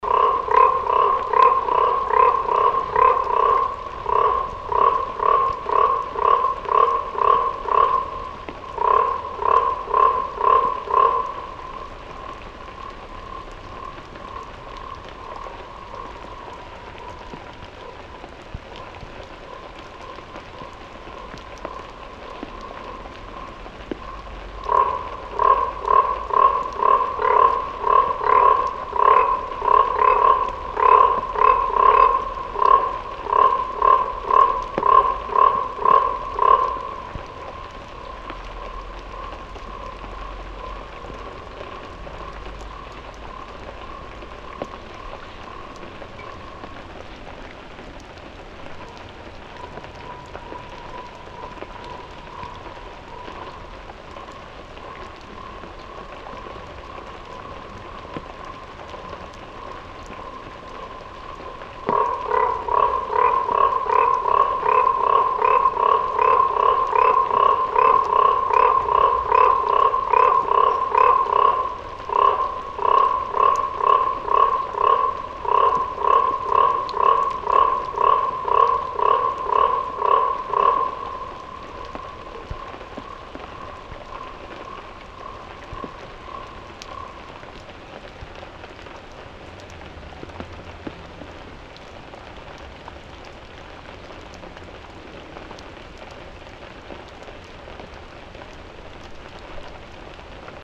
Keszthely, Varosi Park, frog
Hungary, Balaton Lake, Keszthely, frog, rain
Erzsébet királyné St, Hungary, 22 April